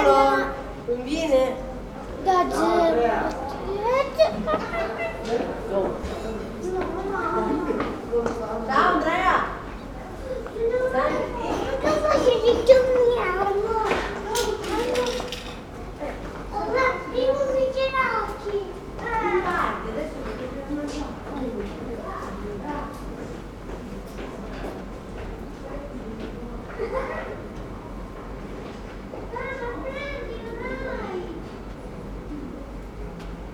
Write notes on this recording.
street ambience, stony paths, afternoon, cold day, first words into red notebook in Koper